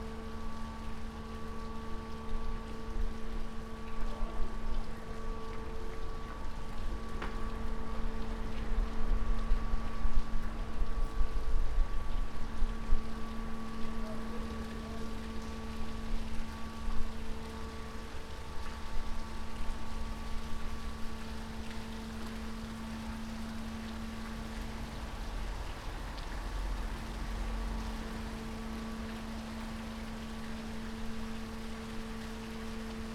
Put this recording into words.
Recorded at Anton Schmid Promenade under Nussdorfer Schleusen Bridge with a Zoom H1 and dead kitten. ship pass by around 15:00.